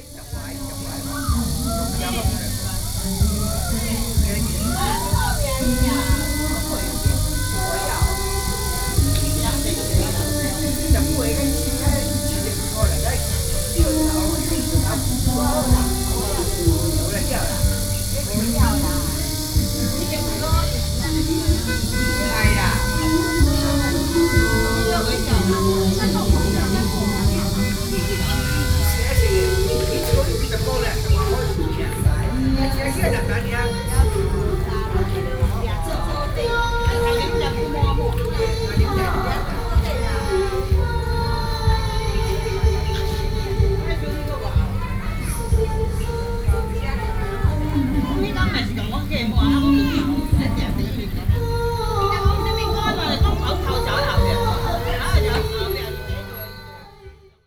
No., Guānhǎi Blvd, Bali District, New Taipei City - Sunday afternoon
Sound of holiday and leisure tourists and residents, Binaural recordings
New Taipei City, Taiwan, 1 July, 16:49